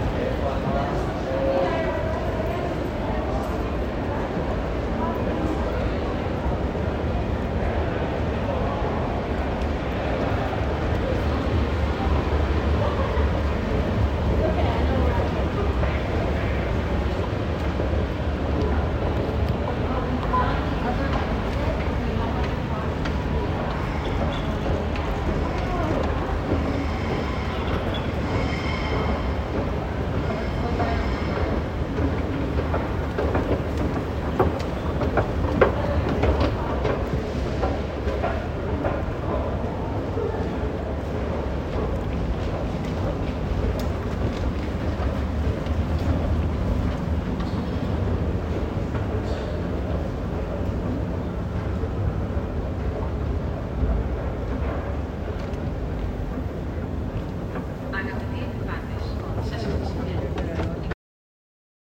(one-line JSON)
{"title": "Areos, Athina, Greece - Hadrian's Library", "date": "2021-10-29 19:30:00", "description": "Standing in one of the busiest locations of Hadrian's Library, during a rush hour.", "latitude": "37.98", "longitude": "23.73", "altitude": "69", "timezone": "Europe/Athens"}